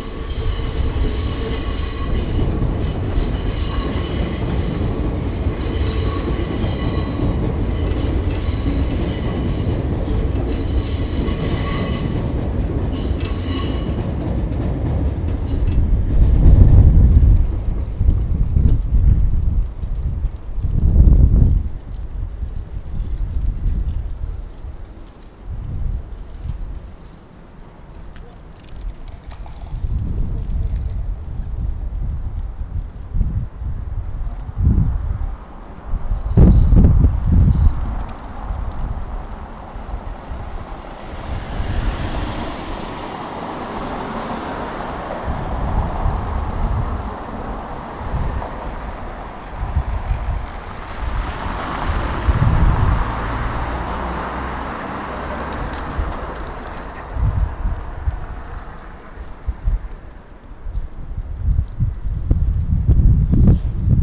Scheepmakersstraat, The Hague, The Netherlands - road under the bridge
Train, leaves, cars. Zoom H1. Binckhorst project page.
Nederland, European Union